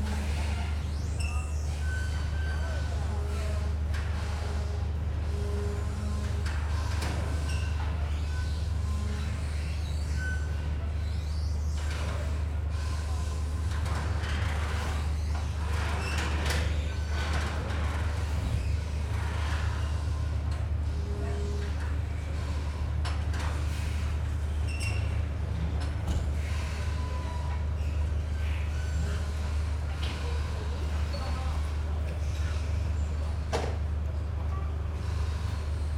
Trieste, Zona Industriale, Italy - boats squeeking at landing stage
boats and gear squeeking and rattling at landing stage
(SD702, AT BP4025)